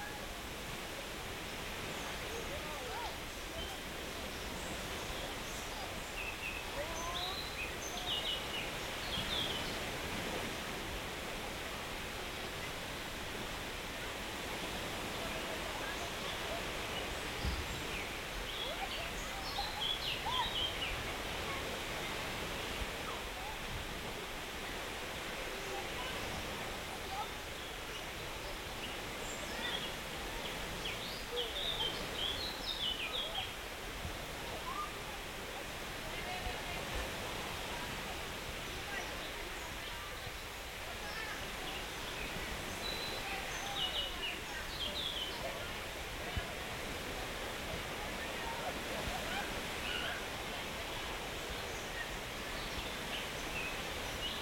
Karklė, Lithuania, beach
looking to the sea and beach....
Klaipėdos apskritis, Lietuva, 2 July 2021